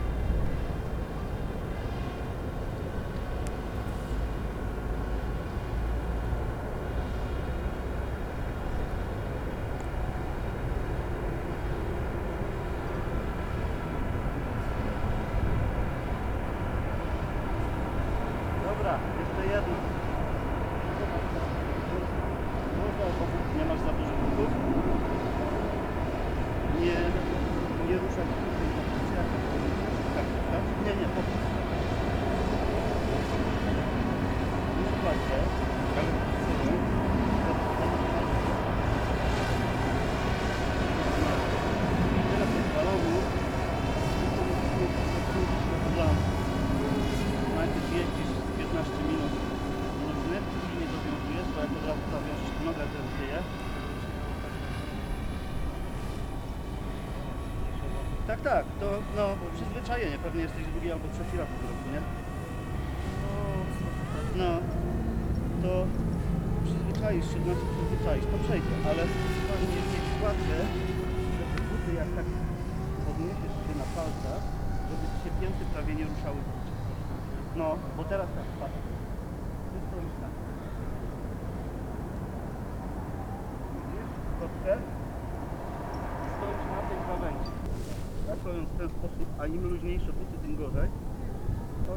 Poznan, UAM Campus. - drone testing
a couple playing with a small, agile drone. they are flying it over the campus and back. just testing how it works I guess. the sound of drones becomes more and more recognizable and common. something that was not known just a few years ago.
about 50m away a guy is teaching other guy how to ride roller skates, you can clearly hear their conversation, muffled only by the sound of a truck and the drone
(roland r-07)